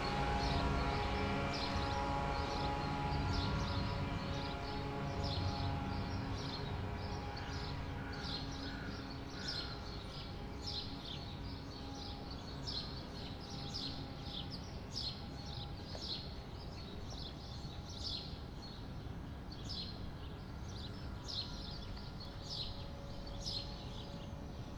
Berlin: Vermessungspunkt Maybachufer / Bürknerstraße - Klangvermessung Kreuzkölln ::: 04.07.2010 ::: 06:47